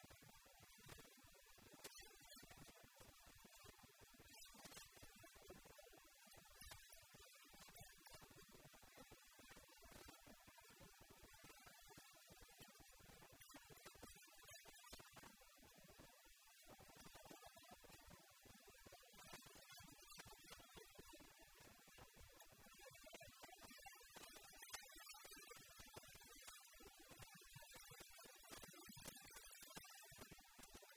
India, Maharashtra, Mumbai, Mahalaxmi Dhobi Ghat, Rub, laundry